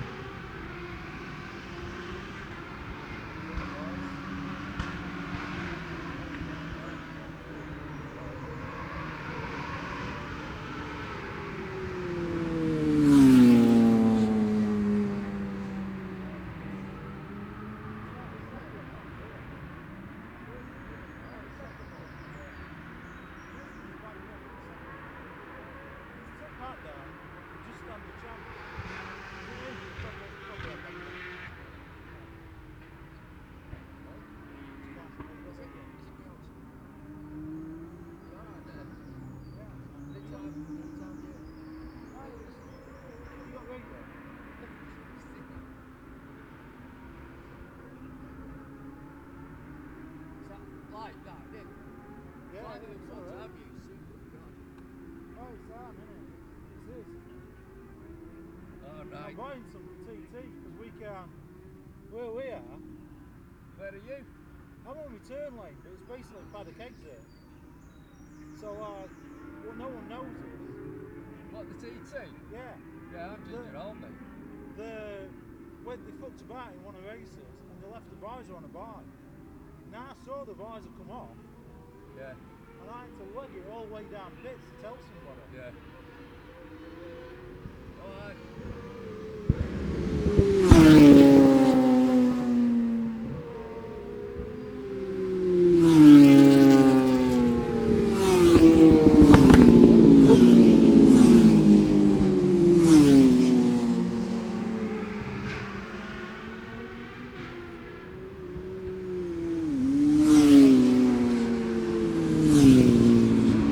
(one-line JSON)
{"title": "Scarborough UK - Scarborough Road Races 2017 ...", "date": "2017-06-24 10:15:00", "description": "Cock o' the North Road Races ... Oliver's Mount ... Senior motorcycle practice ...", "latitude": "54.27", "longitude": "-0.40", "altitude": "142", "timezone": "Europe/London"}